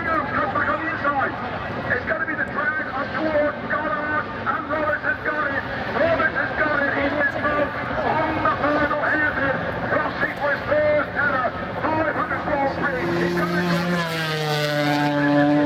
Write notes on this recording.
500cc motorcycle race ... part two ... Starkeys ... Donington Park ... the race and all associated background noise ... Sony ECM 959 one point stereo mic to Sony Minidisk ...